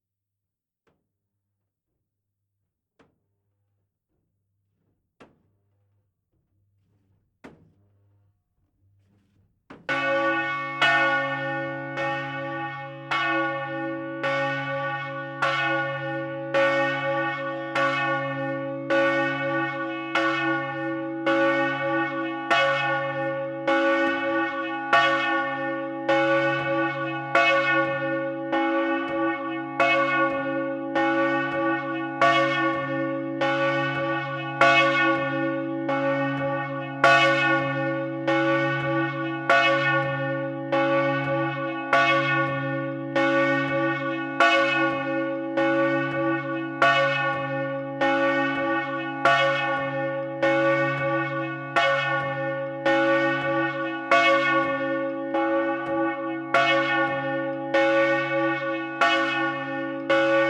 {"title": "Rue de l'Église, Longny les Villages, France - Longny-au-Perche au Perche - Église St-Martin", "date": "2020-02-20 11:30:00", "description": "Longny-au-Perche au Perche (Orne)\nÉglise St-Martin\nvolée cloche 2", "latitude": "48.53", "longitude": "0.75", "altitude": "169", "timezone": "Europe/Paris"}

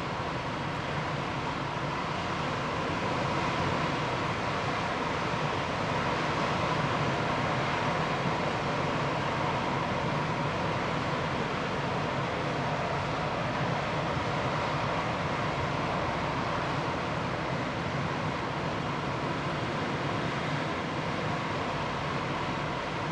{"title": "Llantwit Major, Wales - Vale of Glamorgan", "date": "2016-02-13 00:20:00", "description": "Tucked into the cliffs of the Vale of Glamorgan | recorded with a pair of DPA 4060s, running into a Marantz PMD 661", "latitude": "51.40", "longitude": "-3.50", "altitude": "11", "timezone": "Europe/London"}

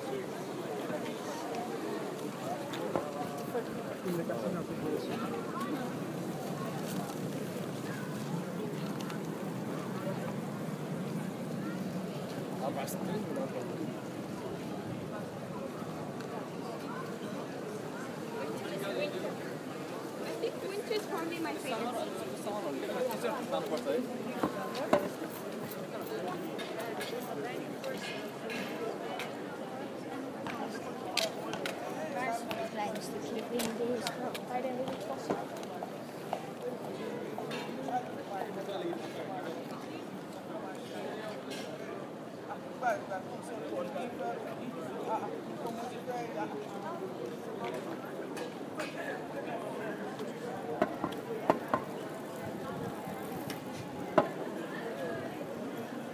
{"title": "Oxford, Oxfordshire, Reino Unido - Bonn Square in the Evening", "date": "2014-08-11 10:55:00", "latitude": "51.75", "longitude": "-1.26", "altitude": "73", "timezone": "Europe/London"}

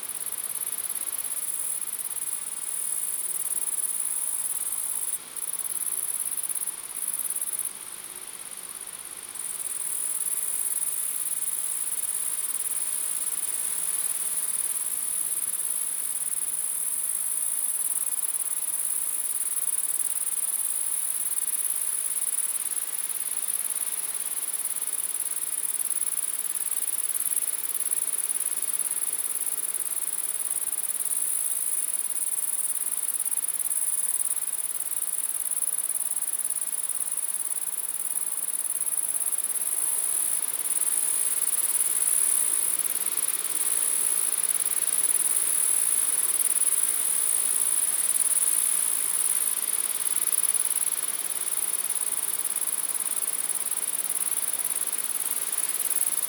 {"title": "sainte marguerite, mountain wind and grasshoppers", "date": "2011-08-28 13:36:00", "description": "Summertime in the afternoon at the Mont Ventaux. A mellow constant mountain wind and the chirping of the grasshoppers.\ninternational field recordings - ambiences and scapes", "latitude": "44.18", "longitude": "5.19", "altitude": "508", "timezone": "Europe/Paris"}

